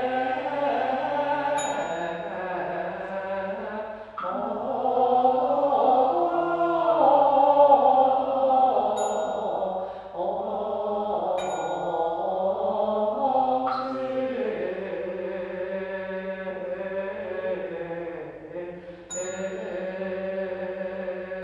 Ackerstraße, Berlin - Beginning of the mass in Buddhist temple Fo-guang-shan.
[I used an MD recorder with binaural microphones Soundman OKM II AVPOP A3]